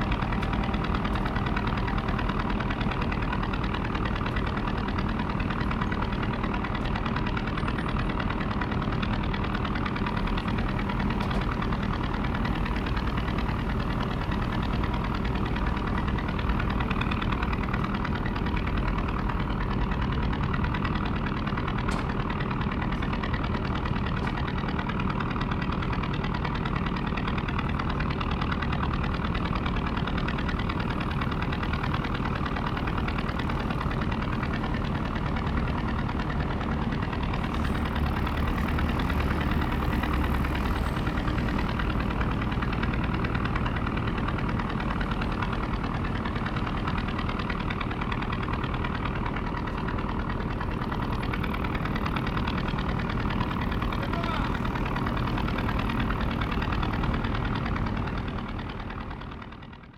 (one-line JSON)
{"title": "馬公港, Penghu County - In the fishing port", "date": "2014-10-22 07:05:00", "description": "In the fishing port\nZoom H2n MS+XY", "latitude": "23.57", "longitude": "119.57", "altitude": "8", "timezone": "Asia/Taipei"}